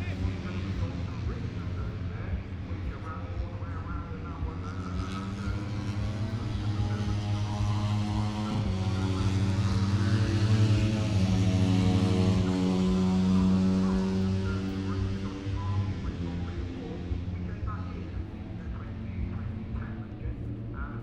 Silverstone Circuit, Towcester, UK - british motorcycle grand prix 2019 ... moto three ... fp1 ...
british motorcycle grand prix 2019 ... moto three ... free practice one ... inside maggotts ... some commentary ... lavalier mics clipped to bag ... background noise ... the disco in the entertainment zone ..?
East Midlands, England, UK, August 2019